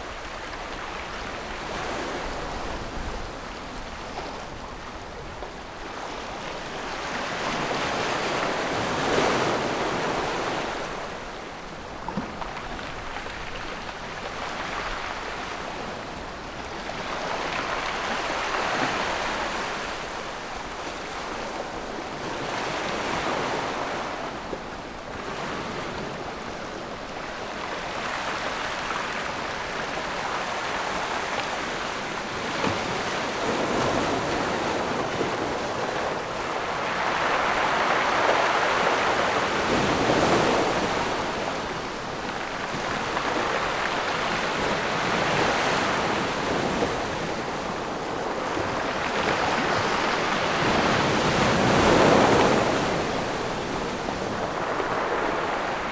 鐵堡, Nangan Township - On the rocky coast
Sound wave, On the rocky coast
Zoom H6 +Rode NT4